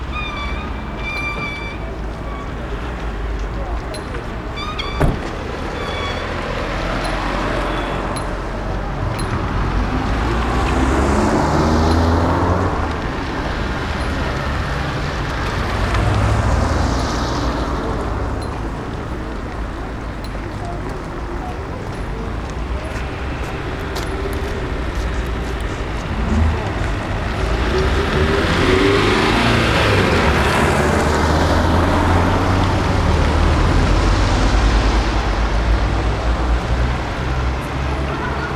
Berlin: Vermessungspunkt Friedelstraße / Maybachufer - Klangvermessung Kreuzkölln ::: 28.01.2013 ::: 16:54
Berlin, Germany, 28 January 2013